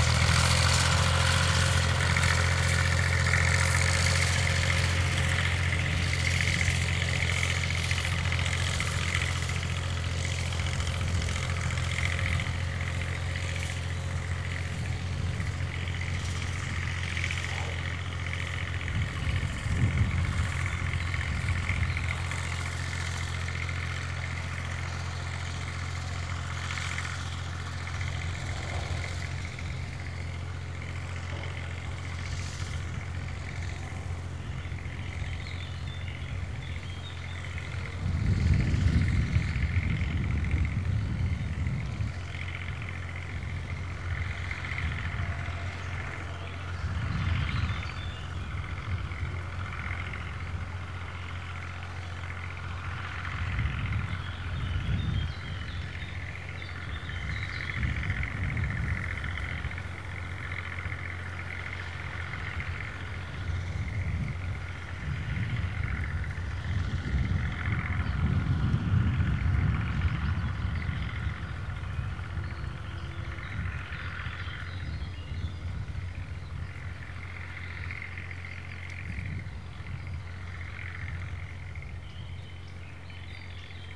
Radojewo, Poligonowa Road - sowing grains
a farming couple on a tractor sowing grains.